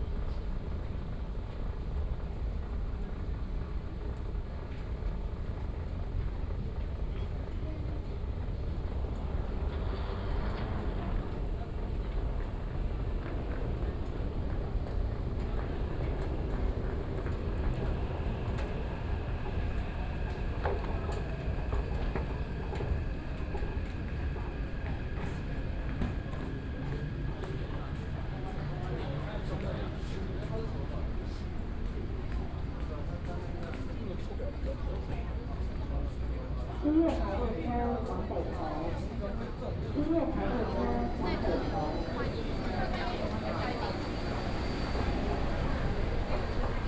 大安站, 信義路四段, Taipei City - walking into the MRT station
Away from the main road, into the MRT station